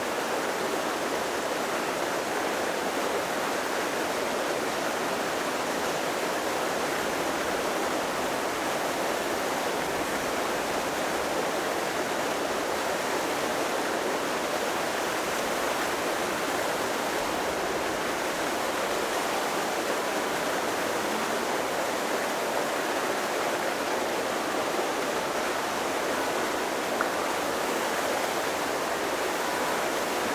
E 49th St, New York, NY, USA - Waterfall at 100 UN Plaza
Sounds of the artificial waterfall located at 100 UN Plaza.